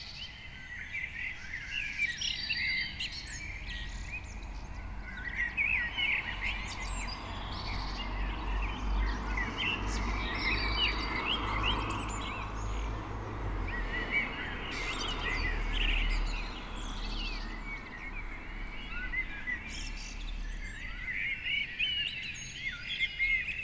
Beselich Niedertiefenbach, early morning bird chorus heard at the open window
(Sony PCM D50
Beselich, Deutschland